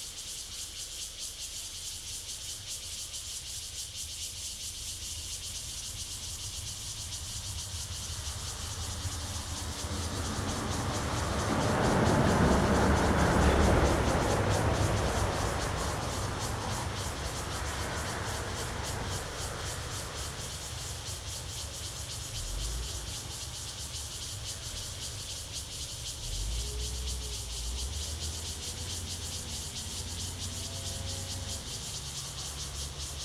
Sec., Zhonghua Rd., Luye Township - Cicadas and Traffic Sound
Cicadas sound, Birdsong, Traffic Sound, Small village, Near the recycling plant
Zoom H2n MS+ XY